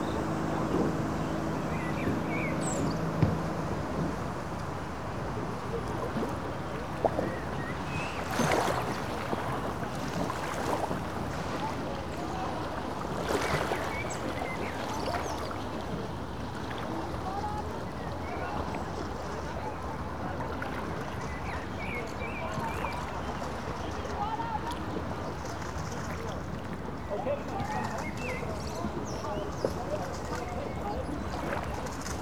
Nürtingen, Deutschland - rowing strokes
The local rowing club (RCN) is located on the other side of the river 'Neckar'.
Equipment: Sony PCM-D50